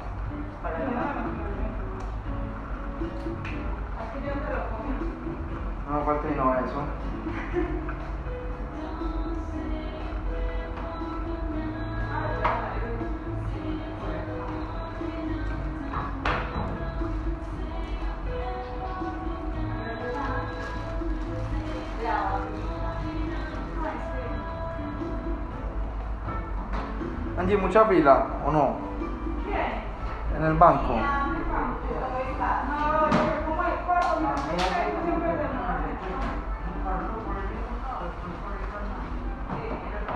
Descripción
Sonido tónico: Agua fluyendo, música de ambiente
Señal sonora: Utensilio dental, intervención odontólogo
Micrófono dinámico (Celular)
Altura 1 metro
Duración 3:11
Grabado por Luis Miguel Henao y Daniel Zuluaga